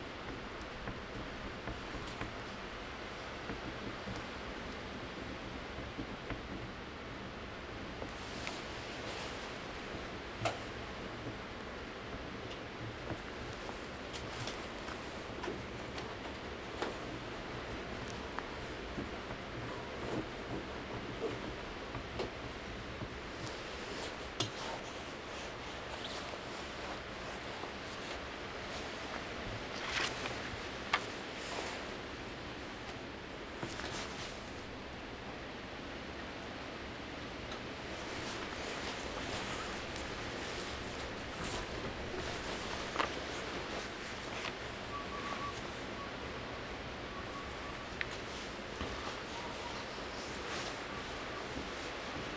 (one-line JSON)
{"title": "Unnamed Road, Isle of Arran, UK - The Library", "date": "2020-01-15 14:54:00", "latitude": "55.45", "longitude": "-5.13", "altitude": "142", "timezone": "Europe/London"}